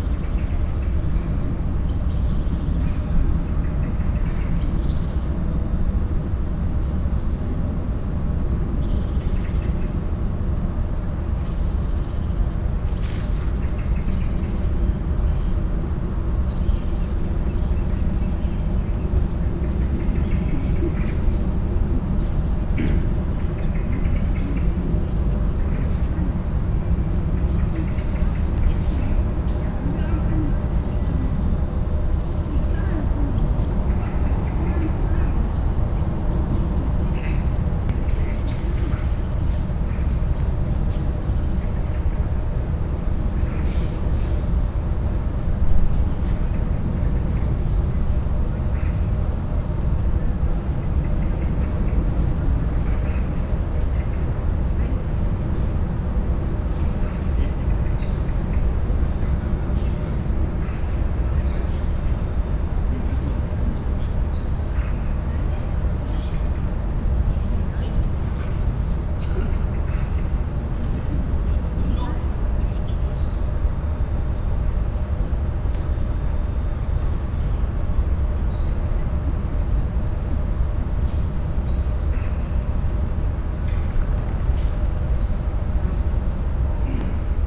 St. Barbara-Klinik Hamm-Heessen, Am Heessener Wald, Hamm, Germany - In the garden of St Barbara
… siting on a bench in the garden of the hospital; right on the edge of the forest; early evening, light is getting dim; an eerie mix of hums from the near by hospital, high flying planes, evening birds and voices in the garden…
… auf einer Bank im Garten des Krankenhauses sitzed; ganz am Rand des Heessener Waldes; Spätsommerabend; Licht schwindet und Geräusche werden lauter; elektrisches Summen der Klinkgebäude, mischt sich unheimlich mit anderen Klängen…